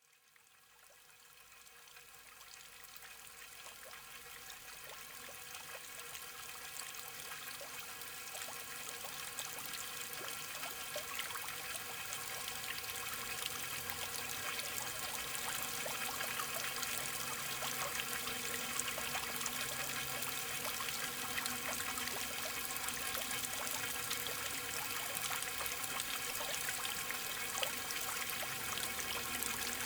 arêches, France - Strange fountain
The strange fountain placed in the center of the ski village called Arêches-Beaufort. It's a quite weird fountain, with an uncommon sounding effect. After one minut hearing the sound, you plunge into the heart of the fountain.